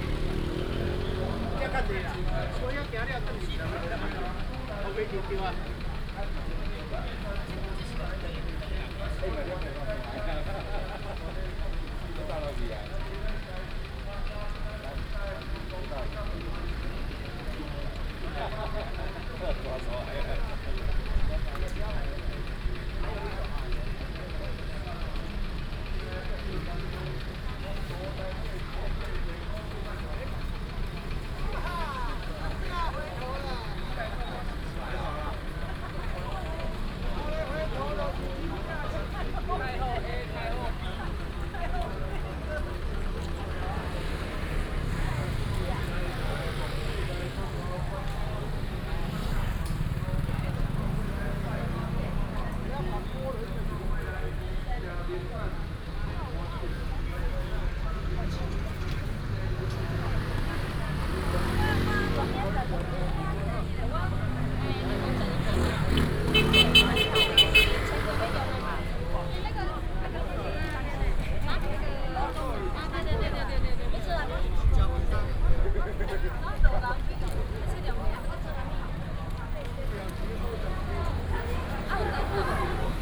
Dongxing, Lunbei Township - Whistle sound
Matsu Pilgrimage Procession, Traffic sound, Firecrackers and fireworks, A lot of people, Directing traffic, Whistle sound
Yunlin County, Taiwan